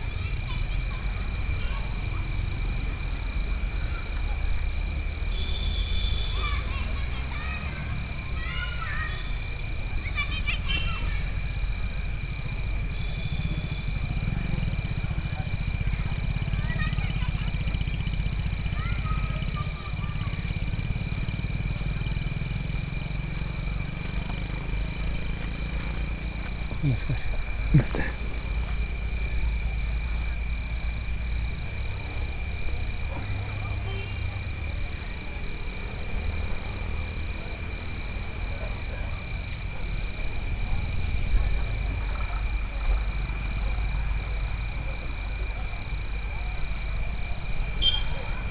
Dharwad, Garag Road, Shridharanand Asram
India, Karnataka, Ashram, insects, night, Namasté, Namaskar